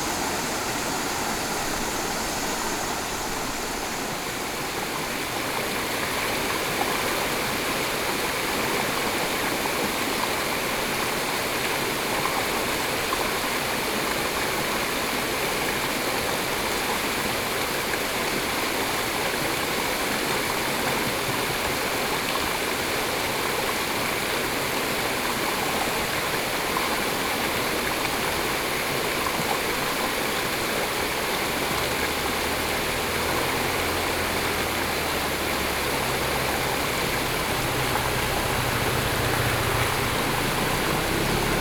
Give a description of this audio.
The sound of water streams, At the bridge, Cicadas cry, Zoom H4n+Rode NT4(soundmap 20120711-17)